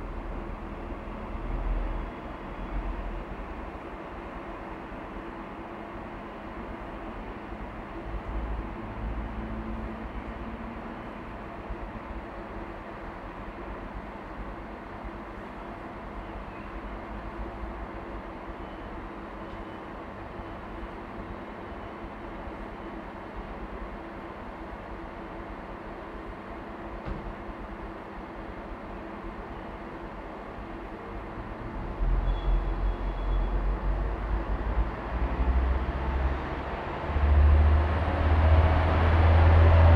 Heinrichstr. - PASSENGER TRAIN, Train Station, Hameln
Passenger Train sound recorded on the train station in Hameln.
TASCAM DR100-MK3
LOM MikroUSI Microphones
Landkreis Hameln-Pyrmont, Niedersachsen, Deutschland, 24 April 2021, 19:50